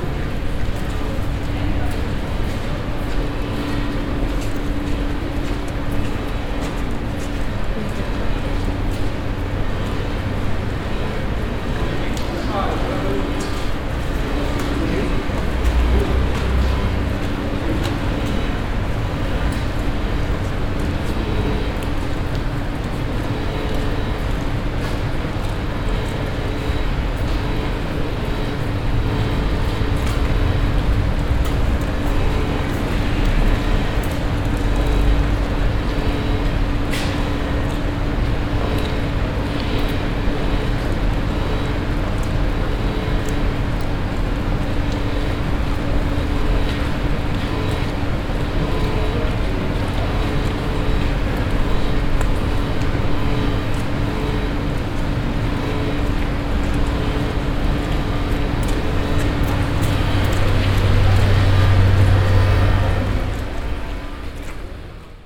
cologne, wdr arcade, rondell and ventilation
inside the wdr arcade, the sound of the rondell architecture and the ventilation. walk to the exit door
soundmap nrw: social ambiences and topographic field recordings